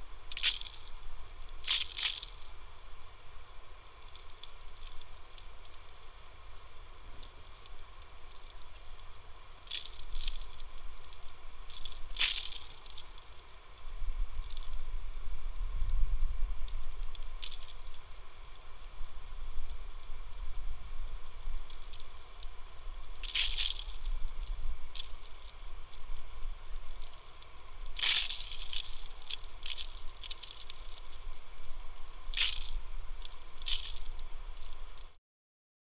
Suffolk Coastal Path, Covehithe, UK - irrigation water hose
field irrigation water wasted through heavily leaking hose connections.
East of England, England, United Kingdom, 9 May 2022